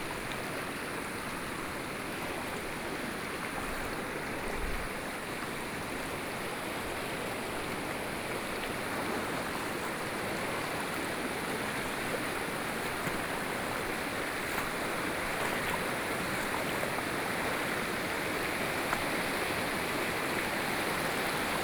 Walking in the stream, Hot weather
Hualien County, Taiwan, 28 August 2014